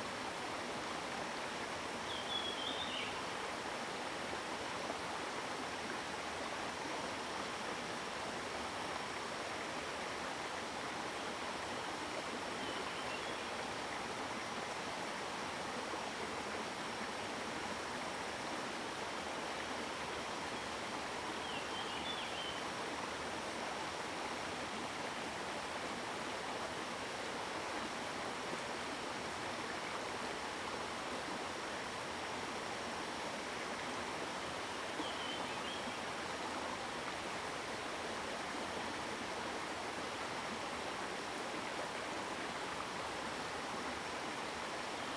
種瓜坑, 桃米里Puli Township - Streams and birds sound
Streams and birds sound, Upstream region of streams
Zoom H2n MS+XY
Puli Township, Nantou County, Taiwan